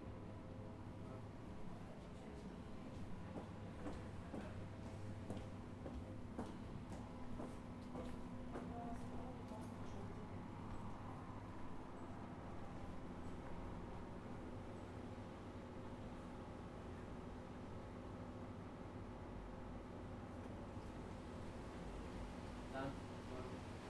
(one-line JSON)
{"title": "Petrol, Nova Gorica, Slovenija - Petrol", "date": "2017-06-06 19:29:00", "description": "Gas station.\nRecorded with Zoom H4n", "latitude": "45.96", "longitude": "13.65", "altitude": "94", "timezone": "Europe/Ljubljana"}